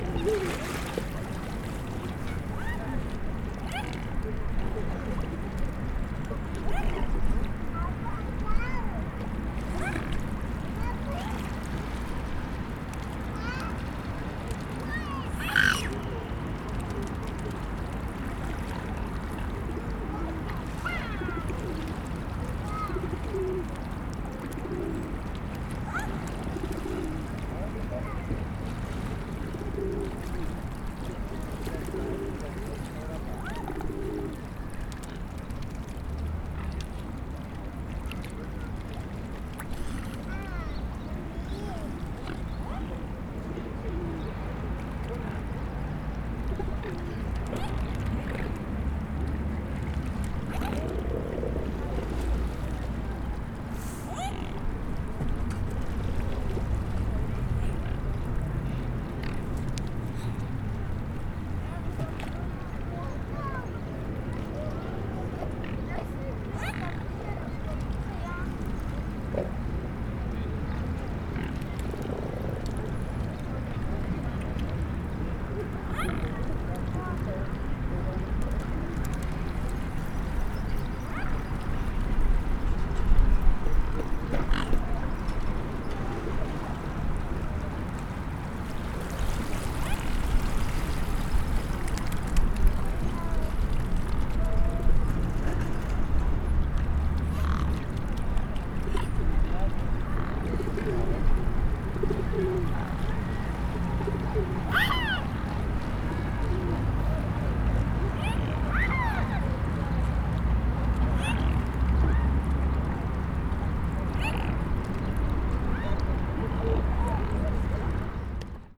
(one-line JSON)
{"title": "Neudorf Ouest, Strasbourg, France - MalrauxCygnes", "date": "2014-03-19 15:04:00", "description": "au bord de l'eau sur la presque Île Malraux, des enfants nourrissent les cygnes", "latitude": "48.57", "longitude": "7.76", "altitude": "139", "timezone": "Europe/Paris"}